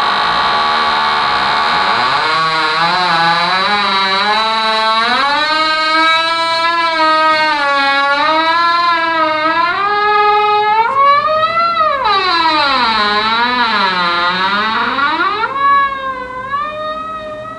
{
  "title": "Bockenheim, Frankfurt am Main, Deutschland - frankfurt, fair, hall 9, singing door",
  "date": "2012-03-20 19:40:00",
  "description": "Inside hall 9 on the studio floor. The sound of a door.",
  "latitude": "50.11",
  "longitude": "8.64",
  "altitude": "114",
  "timezone": "Europe/Berlin"
}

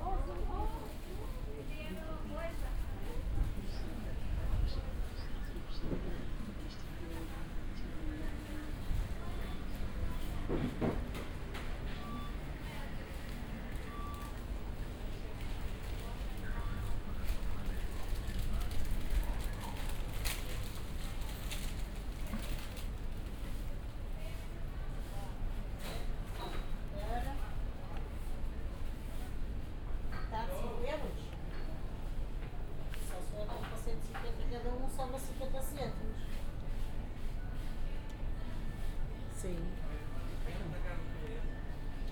{
  "title": "Porto, mercado do Bolhao - mercado do bolhao, closing time",
  "date": "2010-10-11 16:50:00",
  "description": "walk at mercado do bolhao, porto. closing time, cleanup, market is almost empty. (binaural, use headphones)",
  "latitude": "41.15",
  "longitude": "-8.61",
  "altitude": "90",
  "timezone": "Europe/Lisbon"
}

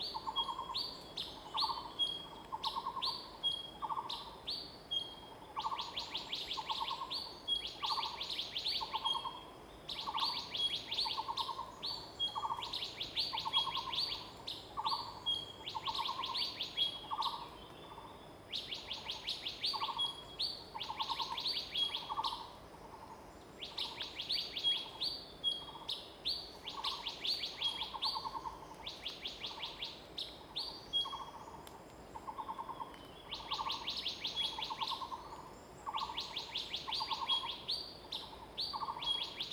{
  "title": "水上, TaoMi Li, Puli Township - Birds singing",
  "date": "2016-04-26 05:27:00",
  "description": "Birds singing, face the woods\nZoom H2n MS+ XY",
  "latitude": "23.94",
  "longitude": "120.91",
  "altitude": "628",
  "timezone": "Asia/Taipei"
}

